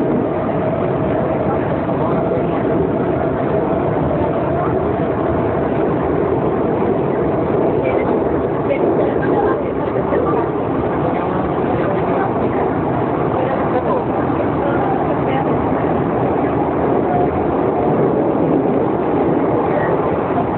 Praha, Česká republika - Prague Metro
onboard recording of subway train going from I.P.Pavlova to Muzeum station